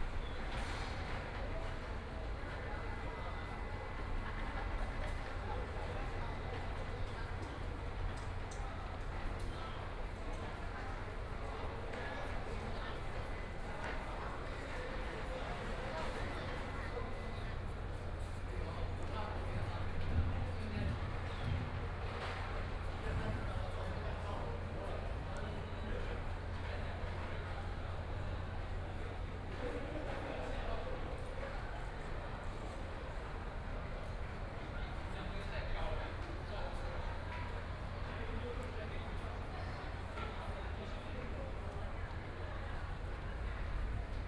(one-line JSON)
{"title": "The Affiliated Senior High School of National Taiwan Normal University - Class time", "date": "2014-01-10 15:09:00", "description": "Class time, Binaural recordings, Zoom H4n+ Soundman OKM II", "latitude": "25.03", "longitude": "121.54", "altitude": "7", "timezone": "Asia/Taipei"}